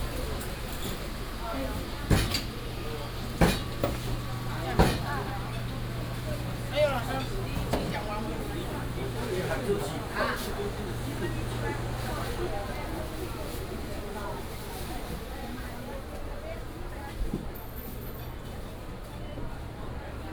Ln., Longquan St., Da’an Dist., Taipei City - Walking through the traditional market
Walking through the traditional market, Small alley